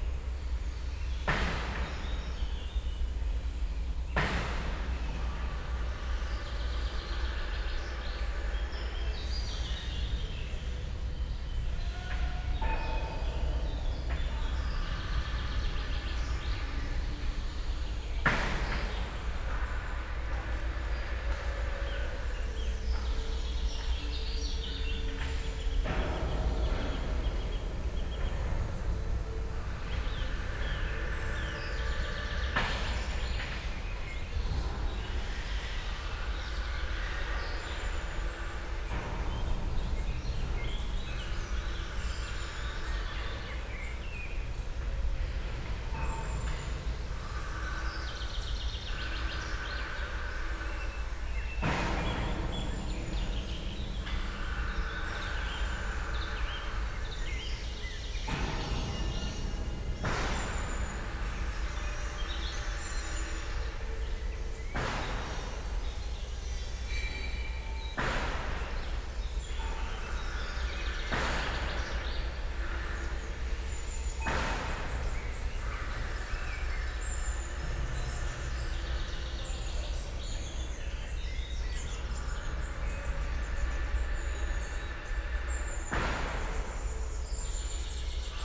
Park Górnik, Siemianowice Śląskie - metal workshop / factory
at the edge of park Górnik, sounds from a nearby factory or metal workshop
(Sony PCM D50, DPA4060)
Siemianowice Śląskie, Poland, 22 May 2019